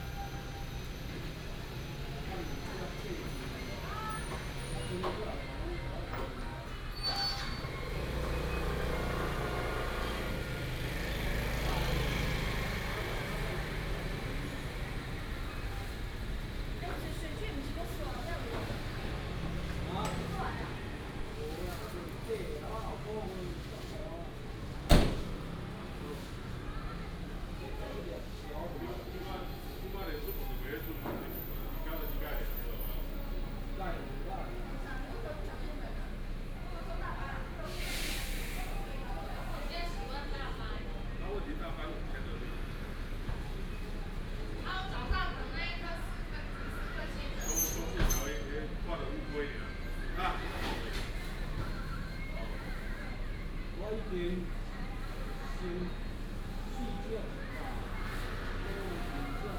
東勢公有零售市場, Taichung City - Public retail market
in the Public retail market, walking in the market, Binaural recordings, Sony PCM D100+ Soundman OKM II
19 September 2017, 07:37